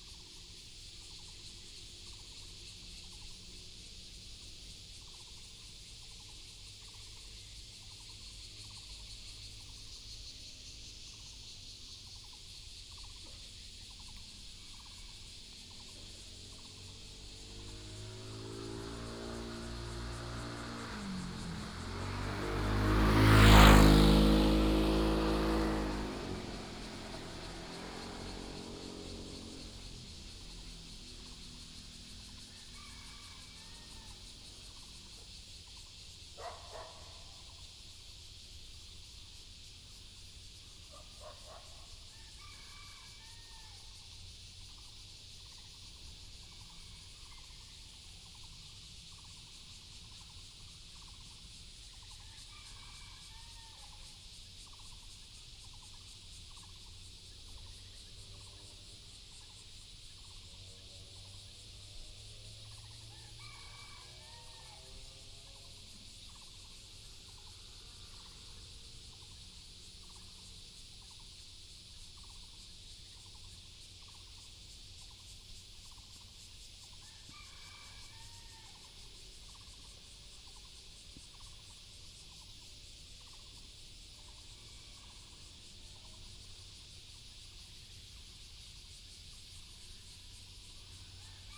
羊稠坑, Luzhu Dist., Taoyuan City - Near high-speed railroads
Near high-speed railroads, traffic sound, birds sound, Cicada cry, Dog sounds
27 July 2017, 07:52